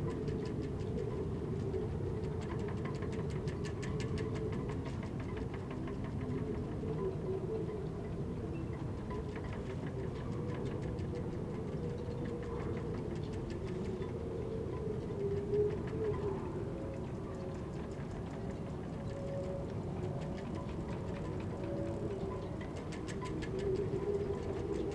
wind blows through the rigging
the city, the country & me: july 16, 2009